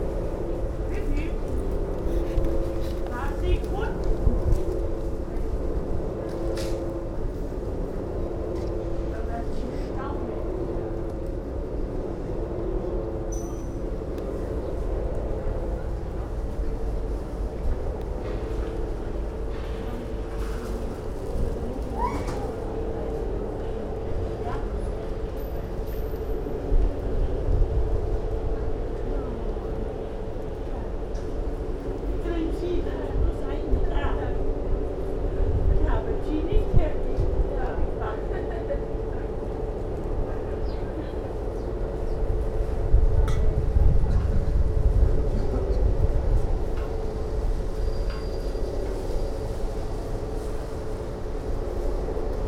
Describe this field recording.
atmosphere of Augsburger Stadtmarkt